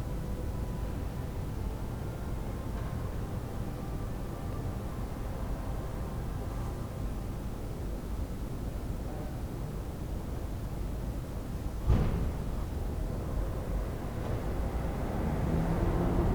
Berlin: Vermessungspunkt Friedel- / Pflügerstraße - Klangvermessung Kreuzkölln ::: 17.04.2013 ::: 02:21
Berlin, Germany, April 2013